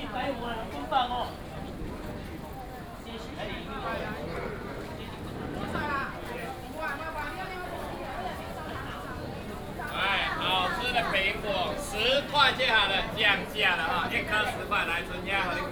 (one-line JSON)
{"title": "清水市場, New Taipei City - Walking through the traditional market", "date": "2015-07-21 10:48:00", "description": "Walking through the traditional market, Very narrow alley", "latitude": "25.17", "longitude": "121.44", "altitude": "18", "timezone": "Asia/Taipei"}